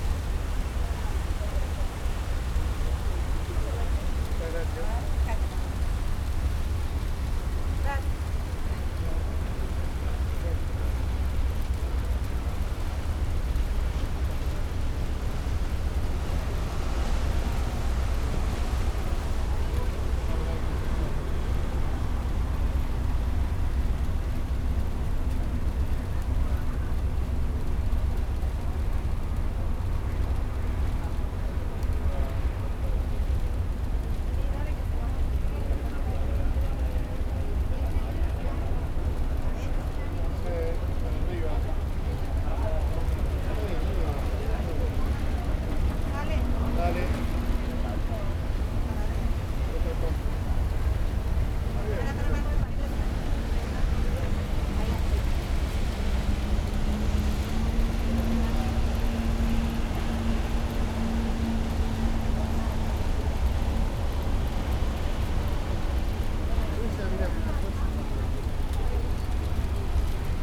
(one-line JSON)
{"title": "South Ferry Plaza, Whitehall St, New York, NY, USA - Boarding on the Staten Island Ferry, a Soundwalk", "date": "2018-04-14 10:00:00", "description": "Soundwalk: Boarding on the Staten Island Ferry.", "latitude": "40.70", "longitude": "-74.01", "timezone": "America/New_York"}